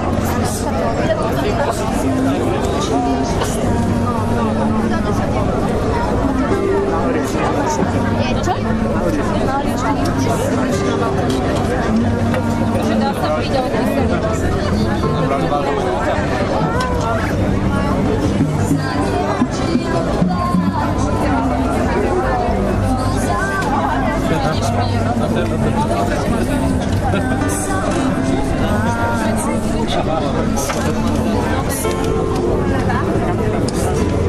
atmosphere at the wine harvesting feast in devinska nova ves